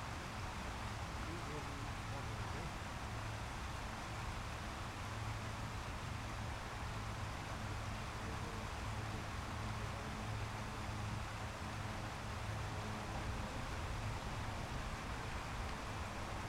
Pec pod Sněžkou, Czechia, under funicular
map is older so there's still no funicular to the top of Snezka mountain